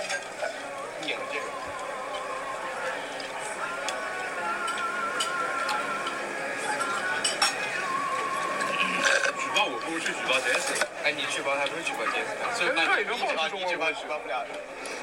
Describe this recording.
This is recorded from a Korean restaurant in Hartford, CT, USA. There are some Chinese students having dinner here. They are discussing about their vacation plan and college situation while enjoying their food. This is recorded by iPhone 12. The sound of students is very cleared.